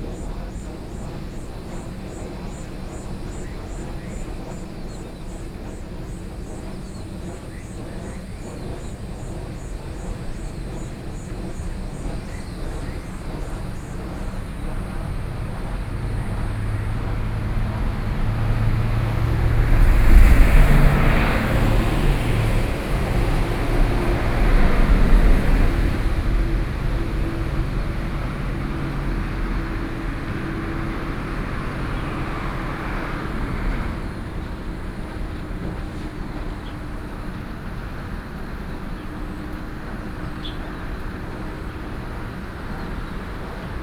Shimen, New Taipei City - The sound of wind turbines fan